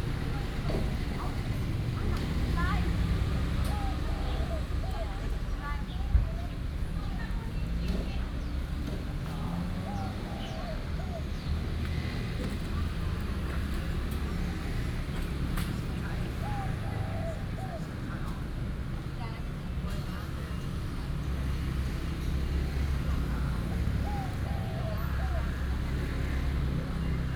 泰順公園, Da’an Dist., Taipei City - Market and Park
Traffic Sound, Sitting in the park, Near the traditional markets, Bird calls